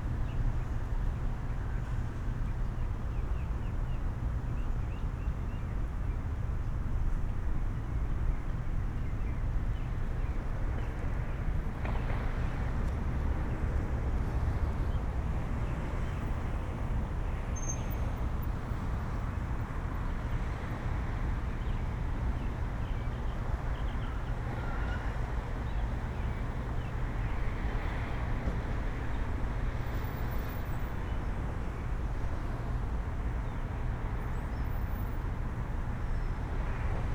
Binaural: Heard the bells at 3pm, ate a gut busting meal of Mexican food across the street then set up to record them as they chimed for 4. Restaurant employee carting a trash can on a dolly, plus cars bells and birds.
CA14 omnis > DR100 MK2
12 January 2013, 15:15